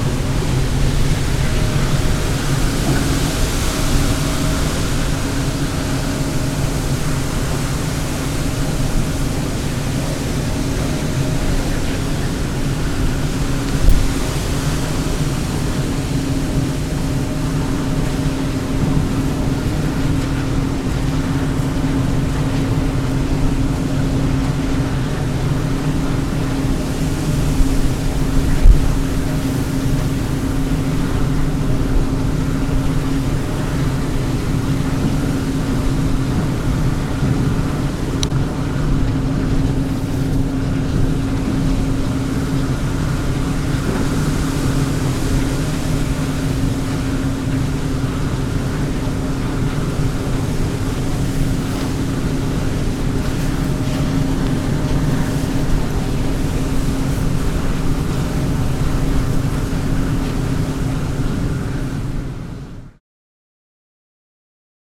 VÅRDKASBACKEN, Härnösand, Sverige - moving under the windmill
Recording made below the wind turbine at Vårdkasen in Härnösand. The recording was performed with movement from the front in the wind turbin to the back and with 2 omnidirectional microphone's.